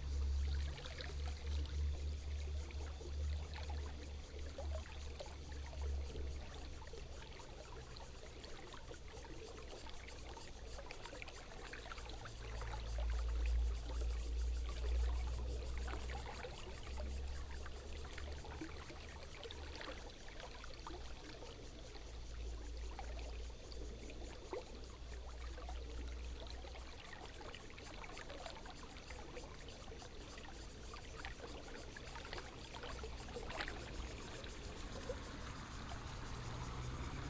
Xipu Rd., Guanshan Township - Near the railway level crossing
The sound of water, Traffic Sound, Near the railway level crossing, Train traveling through
Guanshan Township, Taitung County, Taiwan, September 2014